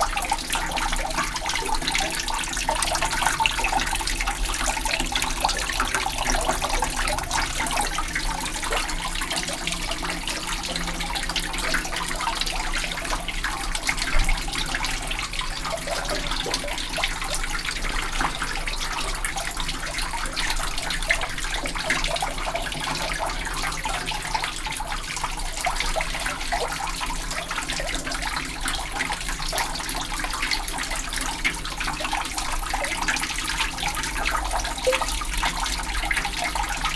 Florac, Rue du Four, fountain under the church / fontaine sous l'église